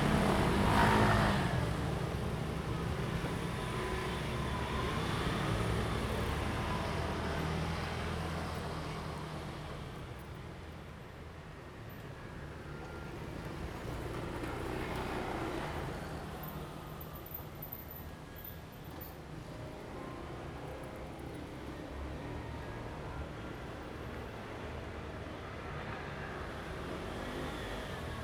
Xingzhu St., East Dist., Hsinchu City - Railways
In the railway level road, Traffic sound, Train traveling through
Zoom H2n MS+XY